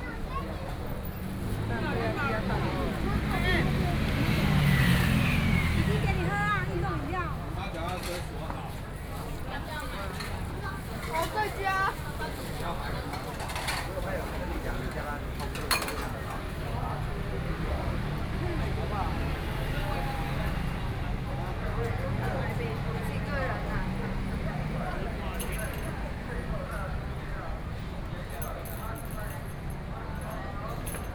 walking on the Road, Many tourists, Various shops voices
Please turn up the volume a little. Binaural recordings, Sony PCM D100+ Soundman OKM II
New Taipei City, Taiwan, 5 April 2014